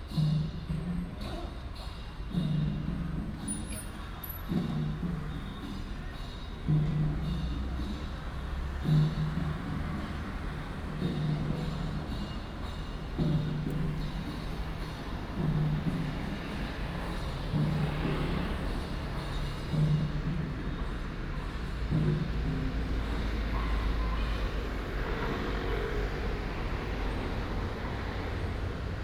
In the square, Theater performance, Traffic sound